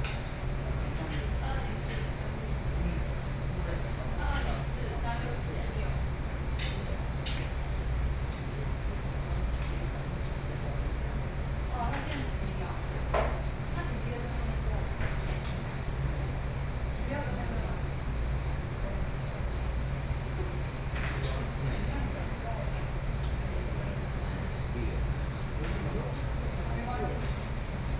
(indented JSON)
{
  "title": "Dacheng Road - In the restaurant",
  "date": "2013-08-14 15:16:00",
  "description": "in the Hot Pot, Traffic Noise, Sony PCM D50 + Soundman OKM II",
  "latitude": "24.91",
  "longitude": "121.15",
  "altitude": "165",
  "timezone": "Asia/Taipei"
}